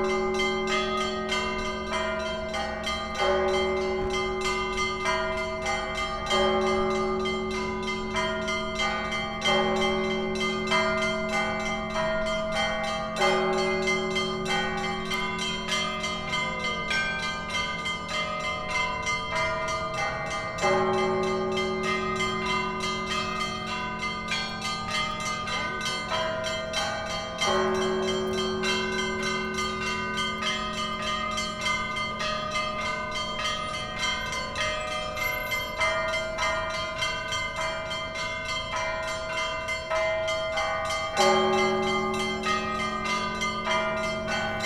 Sofia Center, Sofia, Bulgaria - Bells, Alexander Nevsky Cathedral
25 June 2016